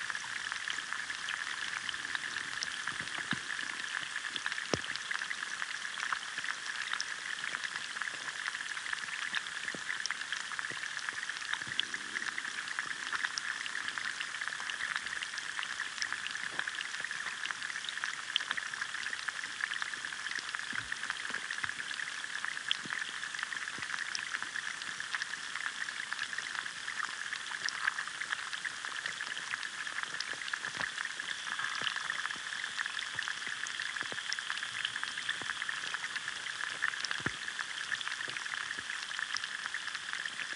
Newmill Trout Fishery, Mouse Water, Lanark, UK - Waterway Ferrics Recording 002
Recorded in mono with an Aquarian Audio H2a hydrophone and a Sound Devices MixPre-3.